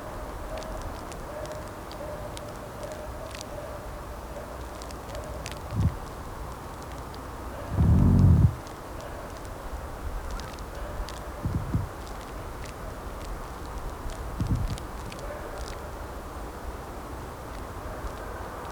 Lithuania, Utena, pine-tree speaks
pine-tree speaks and moans in a small wind
7 February 2011, 13:30